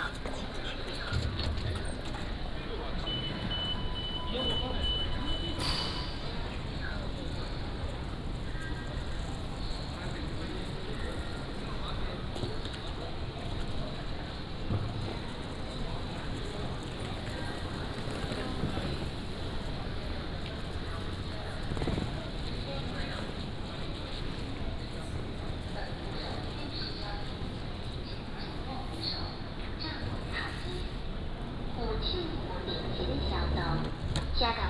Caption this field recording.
Walking through the station, From the station hall to platform